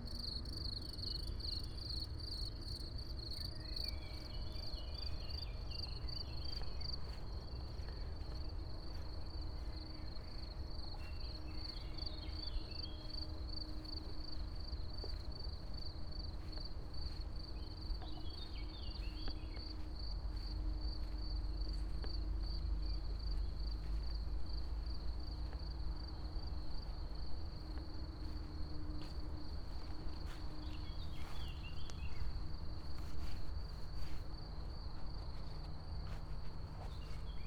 path of seasons, Piramida, Maribor - morning tuning
early solstice morning ambience with crickets, fly, distant traffic, birds, dew on high grass ...